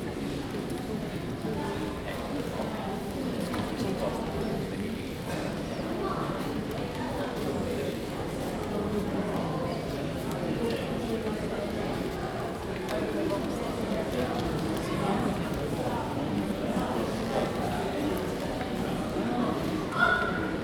Royal Academy of Arts Burlington House, Piccadilly, Mayfair, London, UK - Room V, Summer Exhibition, Royal Academy of Arts.
A rather noisy Room V, Summer Exhibition, Royal Academy of Arts. Recorded on a Zoom H2n.
11 August, 10:15